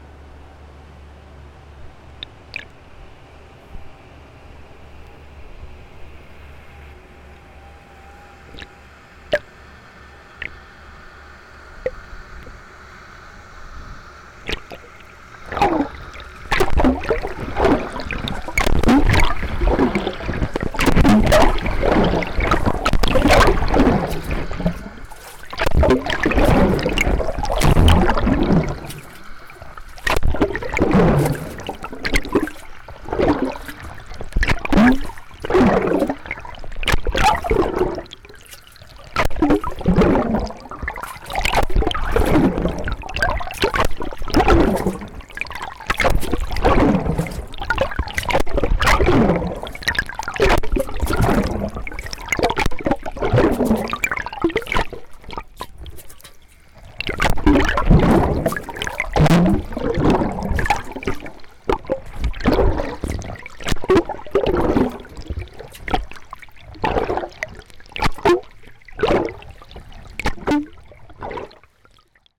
{"title": "Brugmanpad, Culemborg, Netherlands - Train bridge, boats on river", "date": "2022-09-15 15:50:00", "description": "Train over bridge, boat transporting liquid gas, waves. Zoom H2n & 2x hydrophones", "latitude": "51.96", "longitude": "5.21", "altitude": "1", "timezone": "Europe/Amsterdam"}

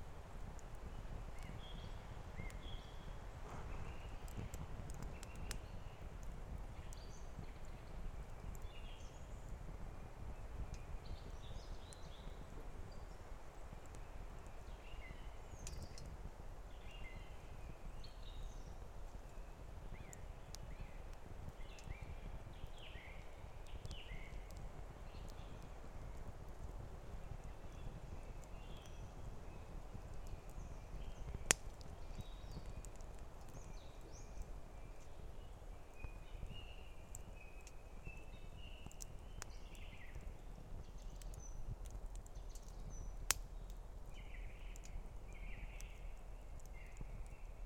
{"title": "Voverynė, Lithuania, small fireplace", "date": "2020-04-09 19:30:00", "description": "quarantine walk into wood. little fireplace in the evening", "latitude": "55.54", "longitude": "25.59", "altitude": "117", "timezone": "Europe/Vilnius"}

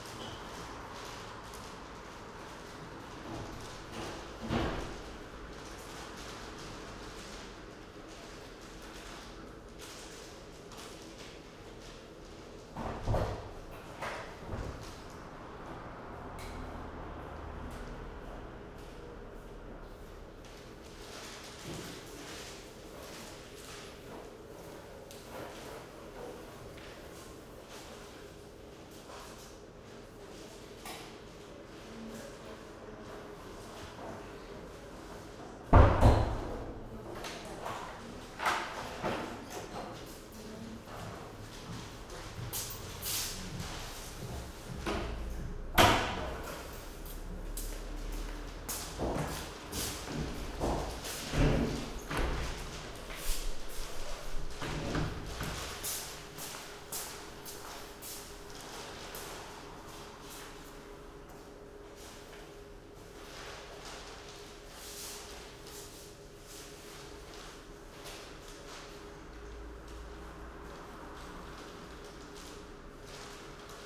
{"title": "Post Office, Śląska, Siemianowice Śląskie - closing time", "date": "2019-05-21 18:59:00", "description": "post office Siemianowice closing\n(Sony PCM D50)", "latitude": "50.30", "longitude": "19.03", "altitude": "275", "timezone": "GMT+1"}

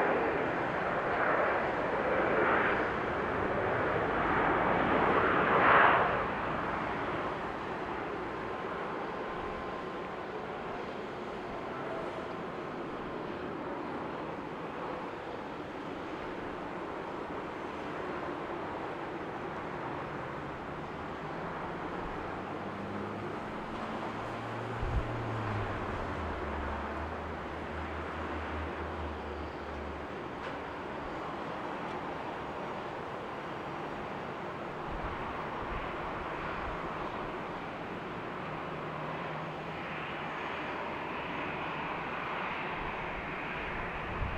{"title": "MSP Airport Terminal 1 Ramp - MSP Airport - 12R Operations from Terminal 1 Ramp", "date": "2022-01-13 15:30:00", "description": "The sounds of landings and take offs on runway 12R at Minneapolis/St Paul international airport from the Terminal 1 parking ramp. The sounds of the airport ramp and car traffic leaving the terminal can also be heard.\nRecorded using Zoom H5", "latitude": "44.88", "longitude": "-93.21", "altitude": "253", "timezone": "America/Chicago"}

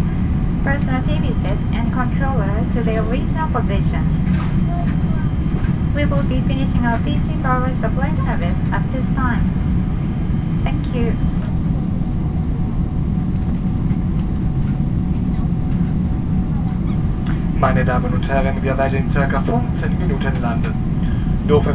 {"title": "in the airplane - approaching tokio airport", "date": "2010-07-23 13:00:00", "description": "inside an airplane landing, approaching tokio airport - an announcement\ninternational sound scapes and social ambiences", "latitude": "35.52", "longitude": "139.83", "timezone": "Japan"}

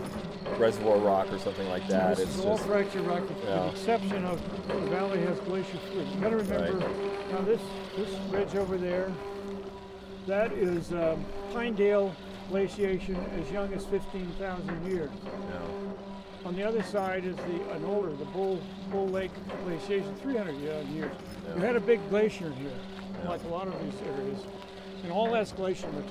{"title": "neoscenes: hot springs wellhead pump", "latitude": "38.73", "longitude": "-106.18", "altitude": "2540", "timezone": "Australia/NSW"}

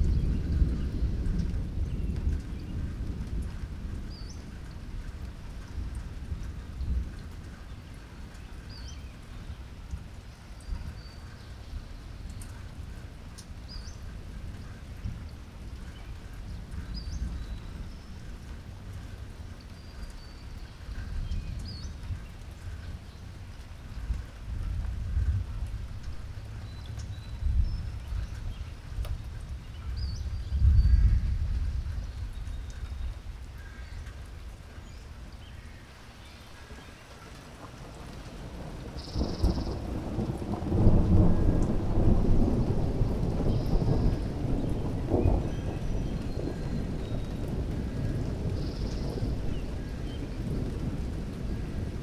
{"title": "Wind & Tide Playground - Thunderstorm", "date": "2020-05-30 08:45:00", "description": "Nobody will EVER top Michael Oster's \"Suburban Thunder\" for the absolute best recording of a thunderstorm:\nNevertheless, when a front blew through this morning, it announced itself with a clap of thunder so massive that it shook my house to its foundations and scared the shit out of me. I knew I HAD to try to get a piece of it. It's not in any danger of unseating Oster, but there were some nice rolling tumblers up high in the atmosphere, and on a big stereo the subsonic content is palpable.\nMajor elements:\n* Birds\n* Thunder\n* Rain hitting the dry gutters\n* 55-in. Corinthian Bells wind chimes\n* A distant dog\n* Distant leaf blowers\n* Aircraft\n* Cars and a truck\nHere's an interesting thing. Another Radio Aporee user, \"Cathartech\" (AJ Lindner), caught the very same thunderstorm as me:\nHe says he started his recording at 7:50 a.m., while mine started at 8:45 a.m., some fifty-five minutes later.", "latitude": "47.88", "longitude": "-122.32", "altitude": "120", "timezone": "America/Los_Angeles"}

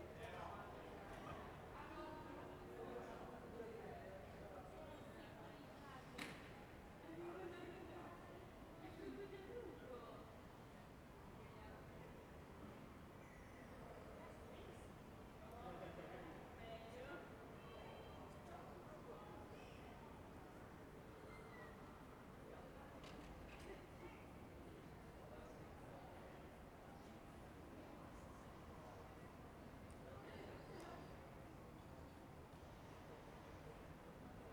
{"title": "Ascolto il tuo cuore, città. I listen to your heart, city. Several chapters **SCROLL DOWN FOR ALL RECORDINGS** - Terrace at late sunset in the time of COVID19 Soundscape", "date": "2020-06-14 21:15:00", "description": "\"Terrace at late sunset in the time of COVID19\" Soundscape\nChapter CVII of Ascolto il tuo cuore, città. I listen to your heart, city\nSunday, June 14th 2020. Fixed position on an internal terrace at San Salvario district Turin, Turin ninety-six days after (but day forty-two of Phase II and day twenty-nine of Phase IIB and day twenty-three of Phase IIC) of emergency disposition due to the epidemic of COVID19.\nStart at 9:15 p.m. end at 10:05 p.m. duration of recording 50'30'', Sunset time at 9:21 p.m.\nGo to similar recording, Chapter VIII, March 14th", "latitude": "45.06", "longitude": "7.69", "altitude": "245", "timezone": "Europe/Rome"}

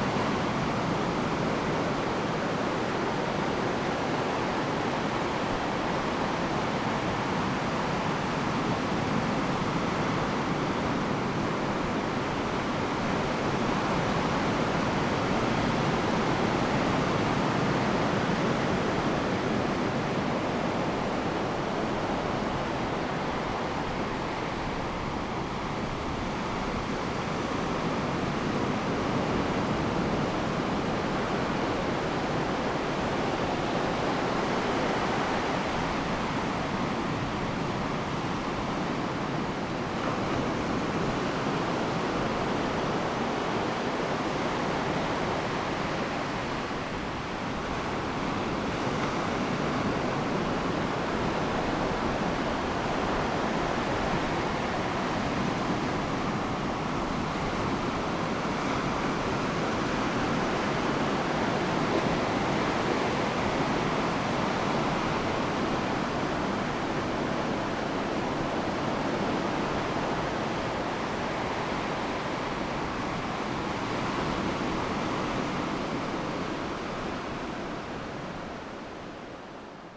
{"title": "佳鵝公路, Hengchun Township - the waves", "date": "2018-04-23 08:23:00", "description": "at the seaside, Sound of the waves\nZoom H2n MS+XY", "latitude": "21.96", "longitude": "120.84", "altitude": "4", "timezone": "Asia/Taipei"}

